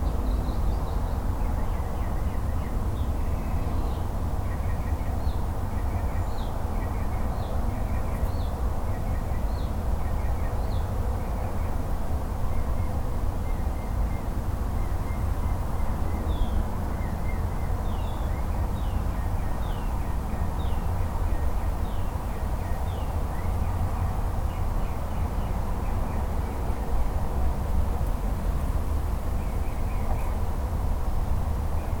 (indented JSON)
{
  "title": "Massage Treatment Room",
  "date": "2010-05-01 06:00:00",
  "description": "Birds and other peaceful nature sounds heard through the window at 6 am.",
  "latitude": "26.57",
  "longitude": "-80.09",
  "altitude": "3",
  "timezone": "America/New_York"
}